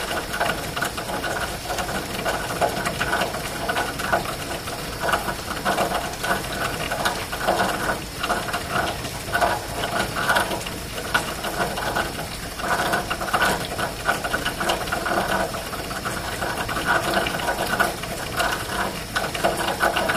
Crescent Hill, Louisville, KY, USA - Zen Rain (2:31am)
Rain falling in an elbow of a downspout with cicadas.
Recorded on a Zoom H4n.